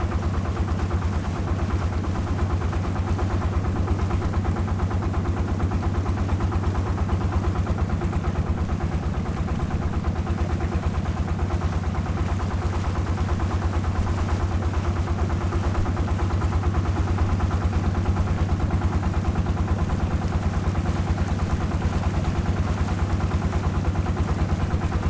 Fangyuan Township, Changhua County - Small truck traveling on the sea
Small truck traveling on the sea, Zoom H6
Changhua County, Fangyuan Township, 新街海堤, January 2014